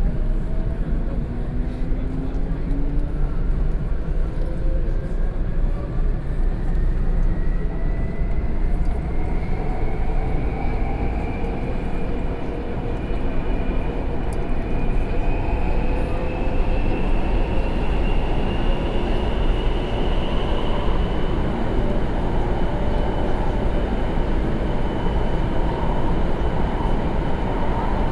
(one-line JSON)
{"title": "Taipei, Taiwan - In the subway", "date": "2012-10-31 19:07:00", "latitude": "25.04", "longitude": "121.51", "altitude": "10", "timezone": "Asia/Taipei"}